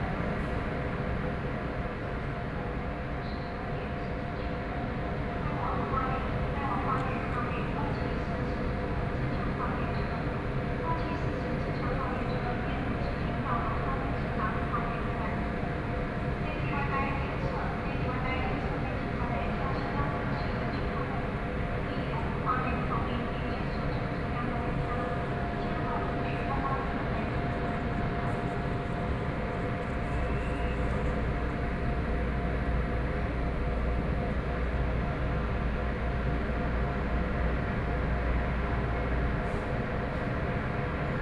Yilan Station, Yilan County - On the platform
On the platform, Waiting for the train, Station broadcast messages, Binaural recordings, Zoom H4n+ Soundman OKM II